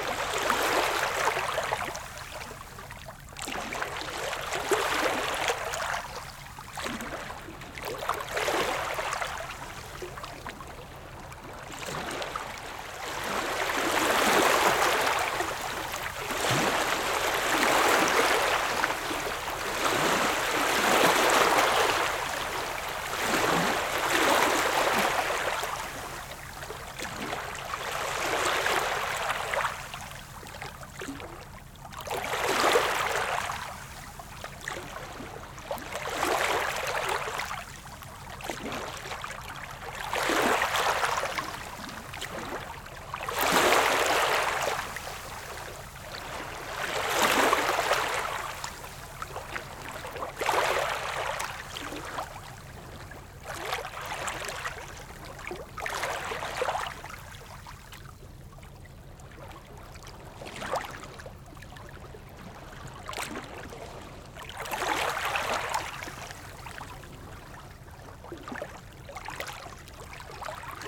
Kalles gränd, Degerhamn, Sverige - Degerhamn small waves sandy beach.
Degerhamn, sandy beach small dynamic waves. Recorded with zoom h6 and Rode ntg3. Øivind Weingaarde.